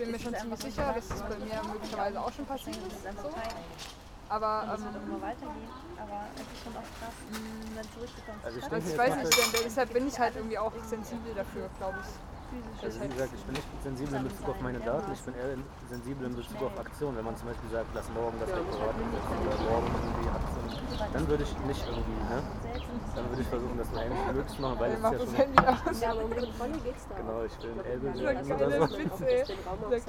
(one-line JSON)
{"title": "berlin wedding brüsseler/antwerpener str. - social network talk", "date": "2010-06-19 15:40:00", "description": "coffee break during a location walk in berlin wedding, talk about social networking, street ambience.", "latitude": "52.55", "longitude": "13.35", "altitude": "43", "timezone": "Europe/Berlin"}